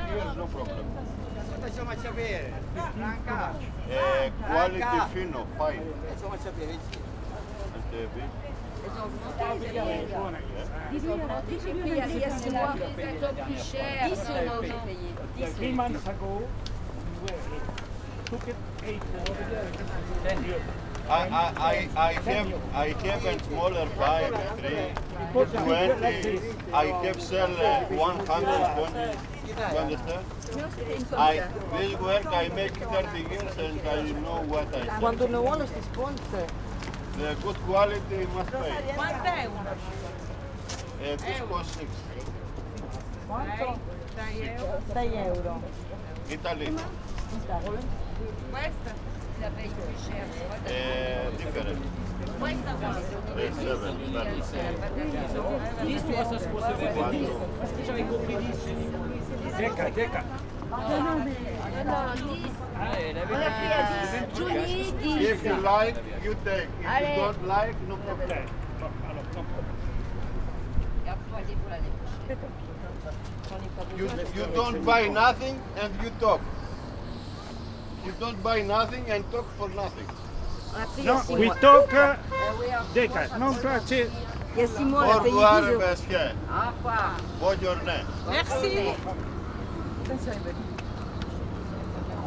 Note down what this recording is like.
a group of french tourists bargaining for sponges with a greek sea fisherman. they are very interested to purchase his items but no deal is done.